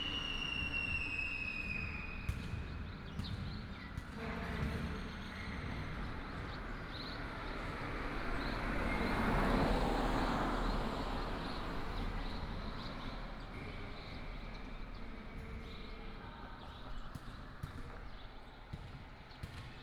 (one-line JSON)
{"title": "牡丹鄉石門路, Pingtung County - Township settlement center", "date": "2018-04-02 18:07:00", "description": "Child, traffic sound, Birds sound, Small village, Township settlement center", "latitude": "22.13", "longitude": "120.77", "altitude": "89", "timezone": "Asia/Taipei"}